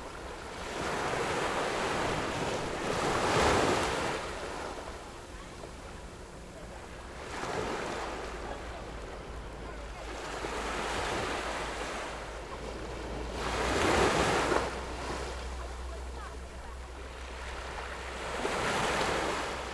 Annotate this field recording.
sound of water streams, The weather is very hot, Zoom H6 MS+ Rode NT4